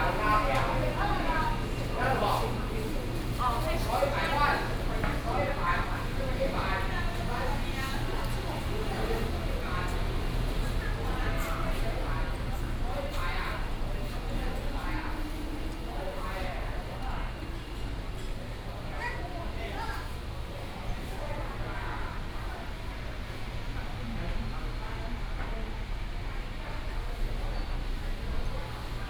福安市場, Taichung City - in the Market
walking in the Public retail market, Binaural recordings, Sony PCM D100+ Soundman OKM II
Xitun District, Taichung City, Taiwan, 24 September 2017, 10:15